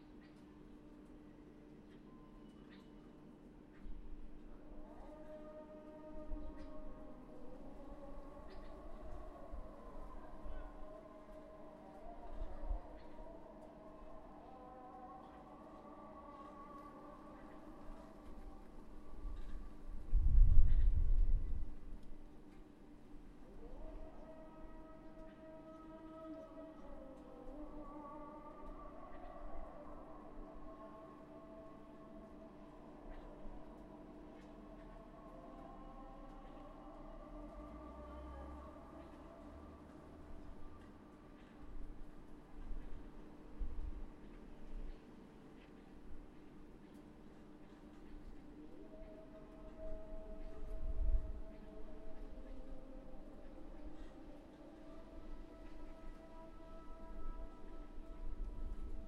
Ramallah, Palestine - friday afternoon outside 2
recorded on zoom H2